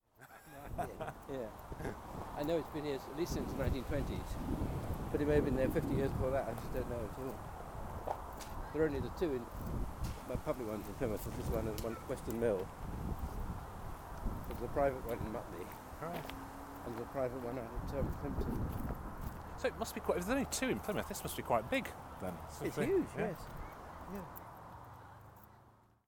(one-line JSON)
{"title": "Efford Walk Two: Talking in Efford graveyard - Talking in Efford graveyard", "date": "2010-09-24 16:39:00", "latitude": "50.39", "longitude": "-4.11", "timezone": "Europe/London"}